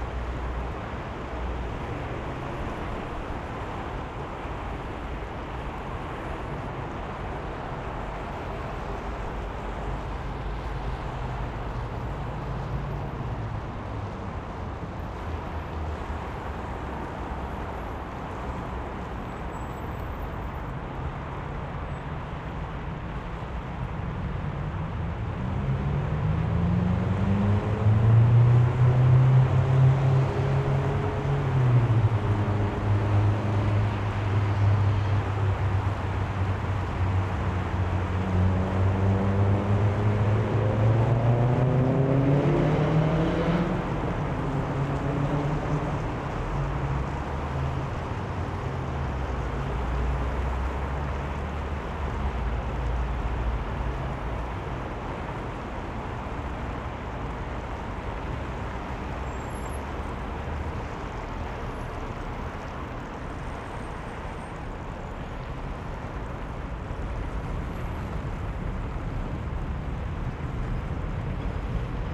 пл. Революции, Челябинск, Челябинская обл., Россия - The main square of Chelyabinsk. Lenin monument. Big traffic cars.
The main square of Chelyabinsk. Lenin monument. Big traffic cars.
Zoom F1 + XYH6